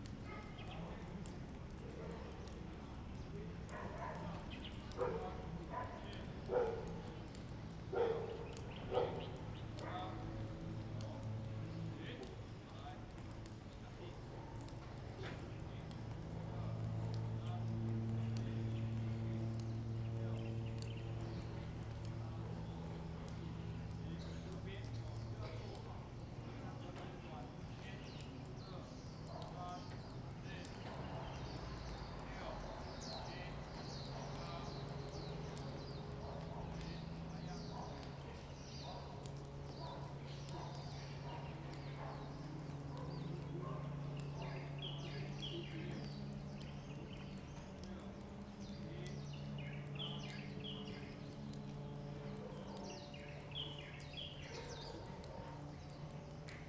金峰鄉介達國小, Taitung County - playground
Bird sound, playground, Elementary school student, Physical education class, Dog barking, Water droplets, Small aircraft in the distance
Zoom H2n MS+XY
28 March 2018, ~17:00